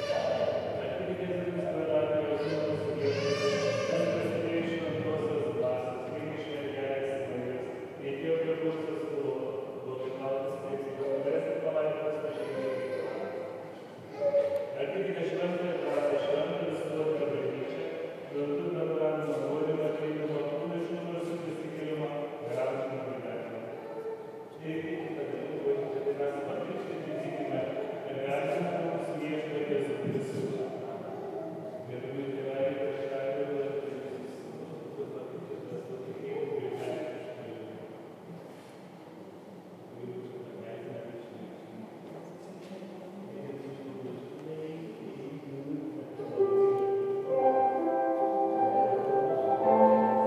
Nida, Lithuania - Marijos Church Interior
Recordist: Tamar Elene Tsertsvadze
Description: On a sunny day inside the church. Ceremony of Christening. Recorded with ZOOM H2N Handy Recorder.